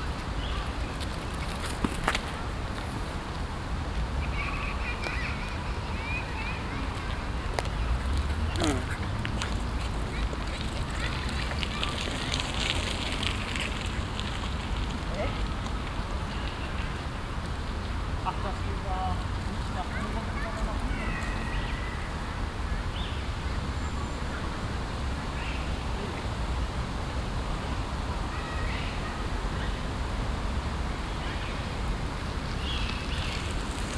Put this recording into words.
stereofeldaufnahmen im september 07 mittags, project: klang raum garten/ sound in public spaces - in & outdoor nearfield recordings